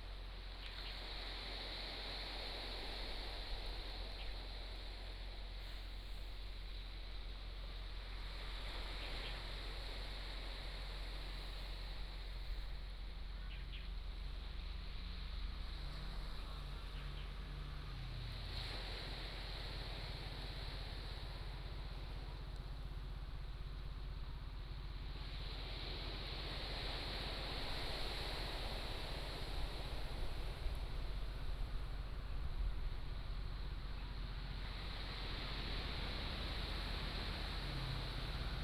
厚石群礁, Liuqiu Township - On the coast
Traffic Sound, On the coast, Sound of the waves, Birds singing